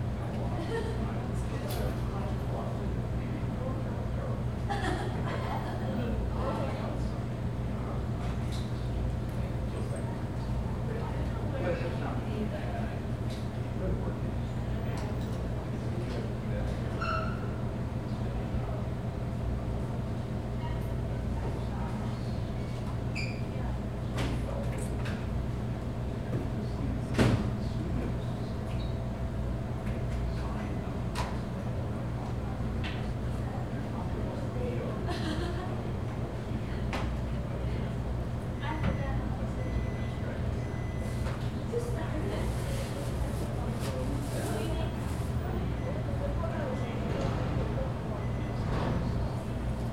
Bolton Hill, Baltimore, MD, USA - Bunting 2nd Floor Overhearing
Monday Morning 11:30.
Bunting second floor, advisory area with people talking, eating and working.
Using Tascam DR40.
Recorded in stereo mode.
19 September 2016, 11:37